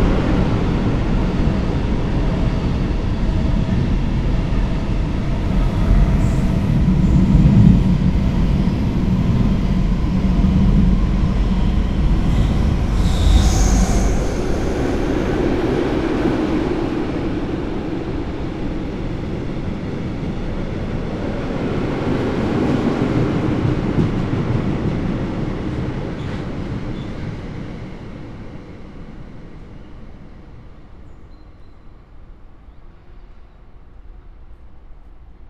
{"title": "river Traun railway bridge, Linz - under bridge ambience", "date": "2020-09-10 07:23:00", "description": "07:23 river Traun railway bridge, Linz", "latitude": "48.25", "longitude": "14.33", "altitude": "248", "timezone": "Europe/Vienna"}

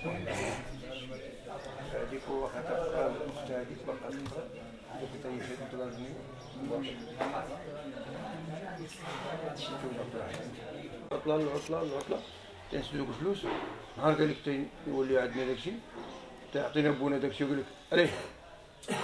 {"title": "Marrakech Medina Kasbha Studio Kasbha leif.e.boman", "latitude": "31.62", "longitude": "-7.99", "altitude": "475", "timezone": "GMT+1"}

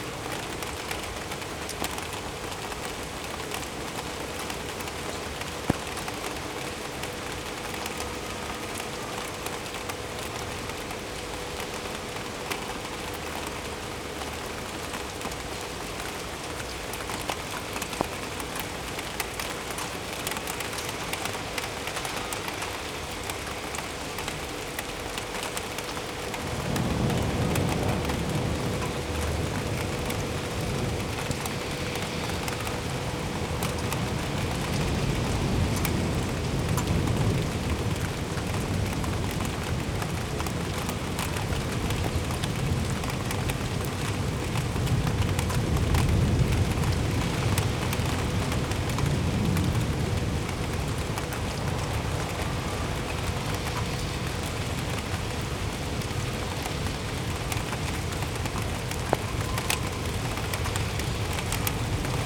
{"title": "Maribor, Slovenia - rain from a 6th story window", "date": "2012-08-26 15:27:00", "description": "rain from a 6th story window of hotel city, courtyard side", "latitude": "46.56", "longitude": "15.65", "altitude": "258", "timezone": "Europe/Ljubljana"}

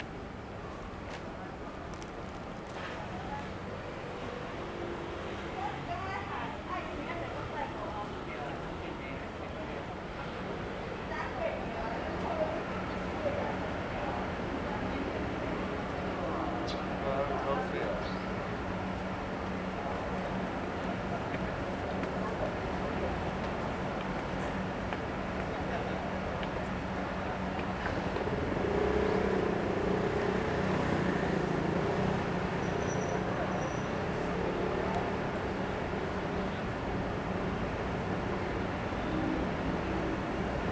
{"title": "Ciaotou Station - Station Square", "date": "2012-03-29 15:06:00", "description": "in the Station Square, Sony ECM-MS907, Sony Hi-MD MZ-RH1", "latitude": "22.76", "longitude": "120.31", "altitude": "9", "timezone": "Asia/Taipei"}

